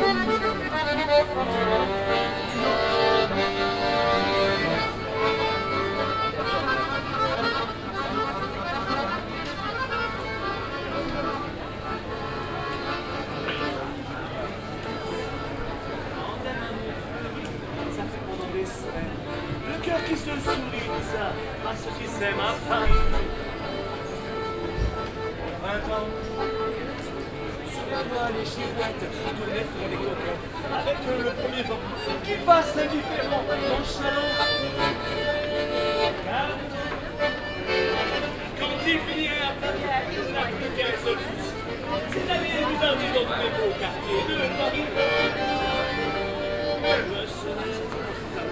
l'isle sur la sorgue, place de la liberte, street musician
A street musician performing accordeon music on the place before the cathedral in between the cafe visitors.
international village scapes - topographic field recordings and social ambiences
L'Isle-sur-la-Sorgue, France